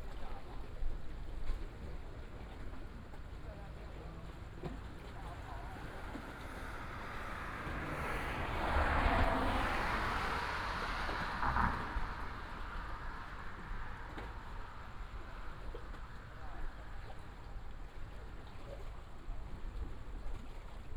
永安漁港, Xinwu Dist., Taoyuan City - Late at the fishing port
Late at the fishing port, traffic sound, Binaural recordings, Sony PCM D100+ Soundman OKM II